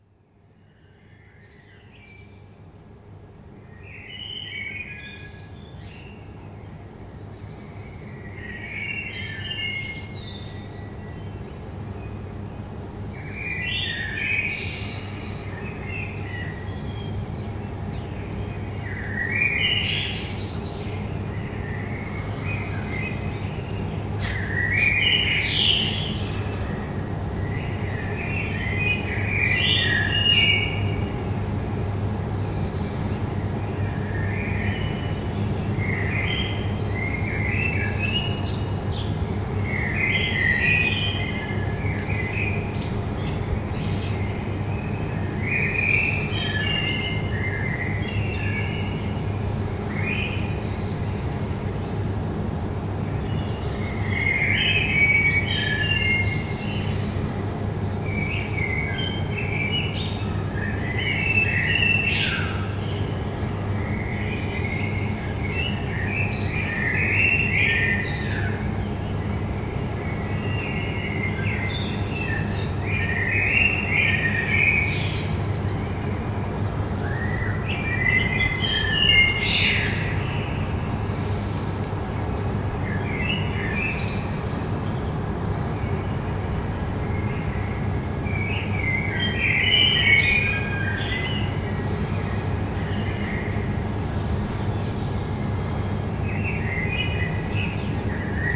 Rue Monserby, Toulouse, France - Dawn Chorus 01
bird song, city noise, metro, air conditioning noise
Captation : ZOOMH4n
France métropolitaine, France, 18 May 2022